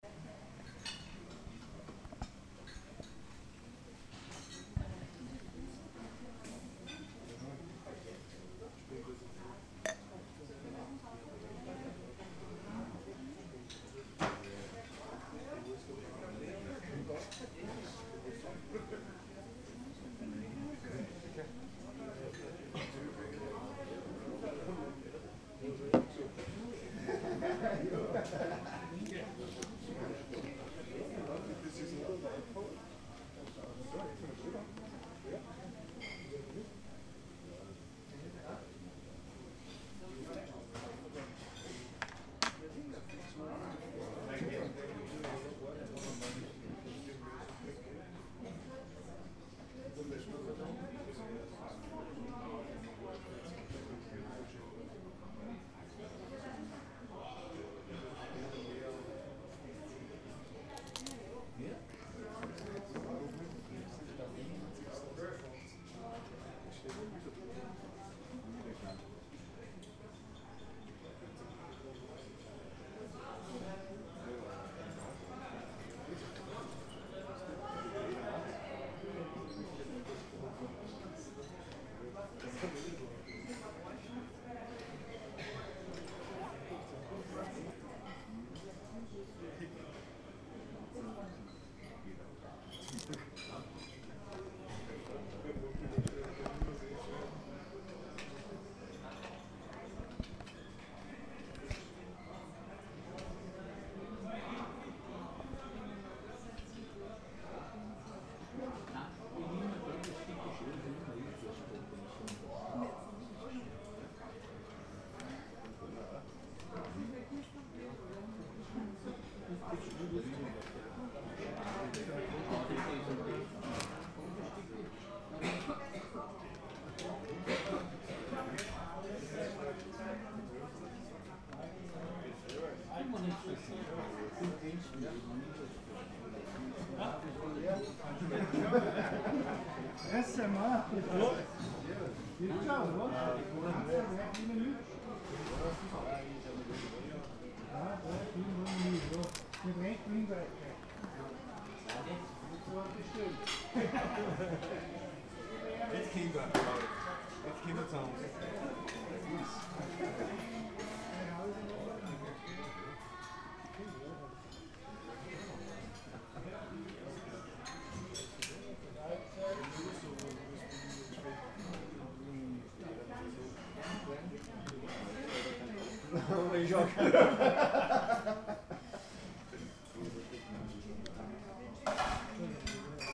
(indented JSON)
{
  "title": "Krems an der Donau, Österreich - Inn",
  "date": "2013-05-08 12:00:00",
  "description": "Lunch's served at an inn in Krems, next to the public spa; the clutter of the plates, cutlery, & the conversation of the guests make a wonderful piece in an instant",
  "latitude": "48.41",
  "longitude": "15.60",
  "altitude": "194",
  "timezone": "Europe/Vienna"
}